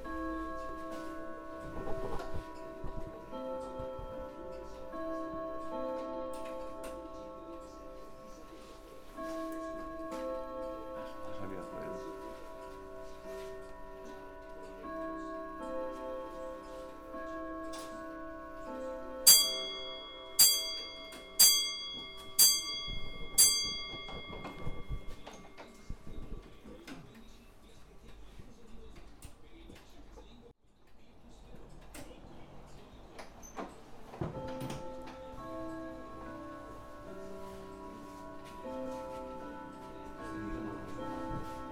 Via Marconi, Bolzano BZ, Italia - 25.10.19 - Orologeria Fausinelli
Alle ore 17 suonano vari orologi, l'orologiaio Gioacchino Faustinelli si aggira nel negozio e aziona altri orologi. Entra un cliente. Apertura della porta e uscita in via Marconi.